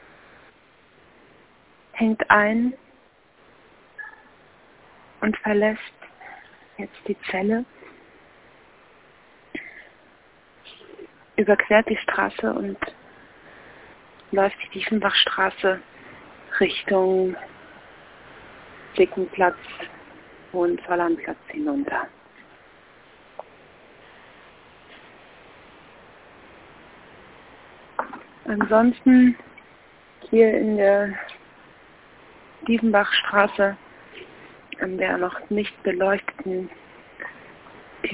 Telefonzelle, Dieffenbachstraße - Echtzeit: Zwei mal gewählt keinen erreicht 15:01:08